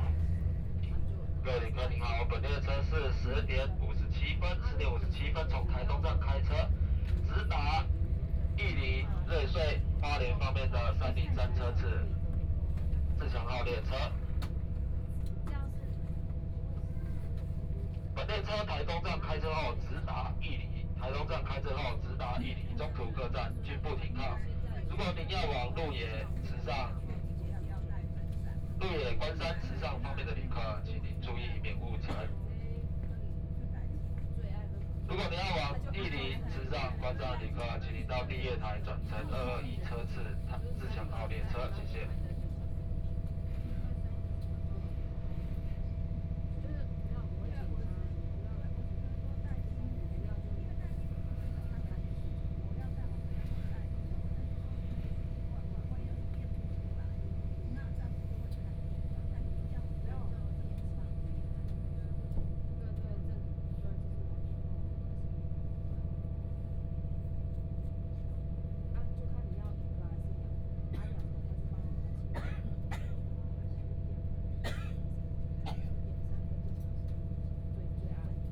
{"title": "Taitung Station, Taitung City - Interior of the train", "date": "2014-01-18 11:04:00", "description": "Train message broadcasting, Interior of the train, Binaural recordings, Zoom H4n+ Soundman OKM II", "latitude": "22.79", "longitude": "121.12", "timezone": "Asia/Taipei"}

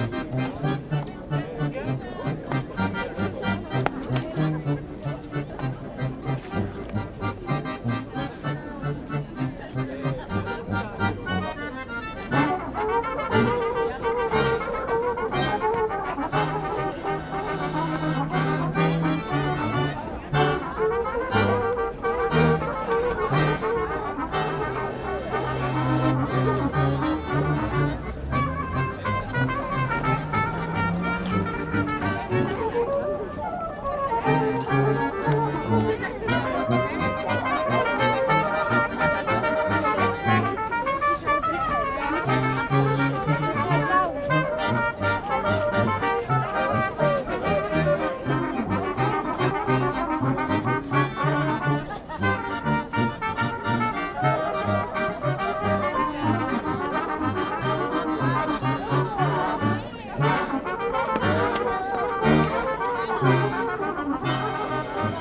{"title": "berlin, maybachufer: speakers corner neukölln - the city, the country & me: balkan brass band", "date": "2011-04-15 16:17:00", "description": "another balkan brass band\nthe city, the country & me: april 15, 2011", "latitude": "52.49", "longitude": "13.43", "altitude": "42", "timezone": "Europe/Berlin"}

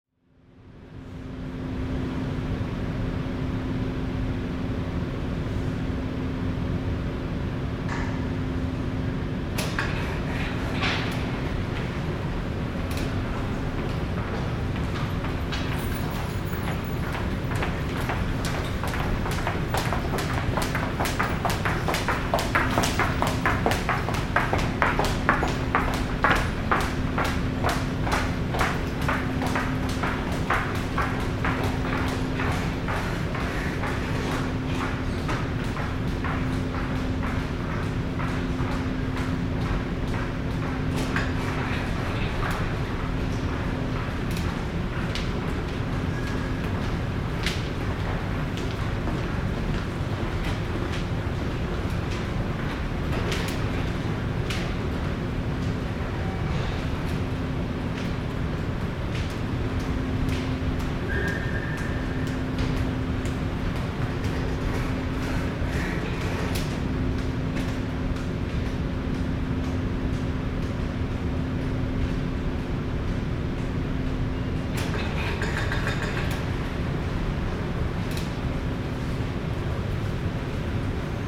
{"title": "Calgary +15 Canterra bridge", "description": "sound of the bridge on the +15 walkway", "latitude": "51.05", "longitude": "-114.07", "altitude": "1056", "timezone": "Europe/Tallinn"}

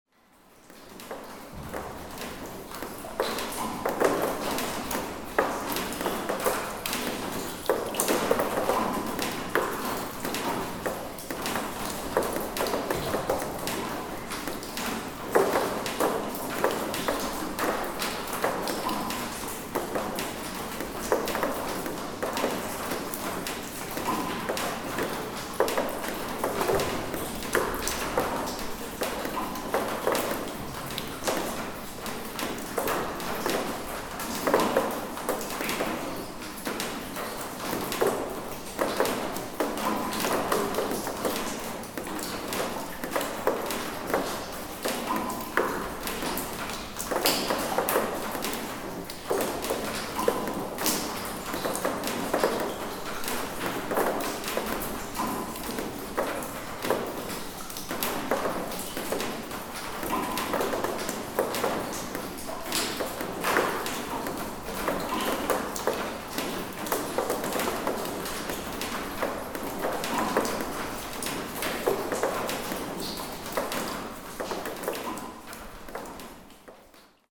{"title": "Rumelange, Luxembourg - Hutberg mine drift", "date": "2015-05-23 12:00:00", "description": "Water falling from a drift in the Hutberg abandoned mine.", "latitude": "49.47", "longitude": "6.02", "altitude": "394", "timezone": "Europe/Luxembourg"}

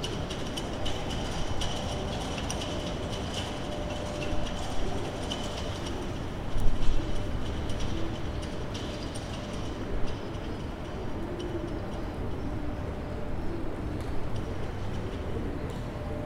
{"title": "Espadon Saint-Nazaire submarine base, France - Espadon Saint-Nazaire submarine base", "date": "2021-02-20 15:37:00", "description": "recording with a Zoom H4", "latitude": "47.28", "longitude": "-2.20", "altitude": "4", "timezone": "Europe/Paris"}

